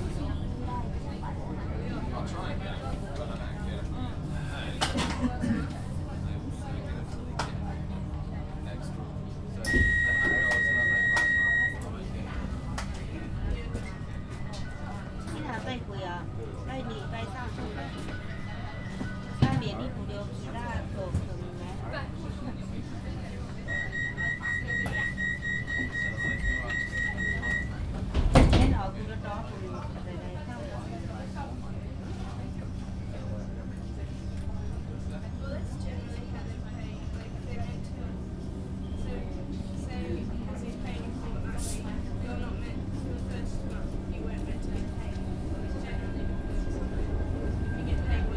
neoscenes: train to Regent Station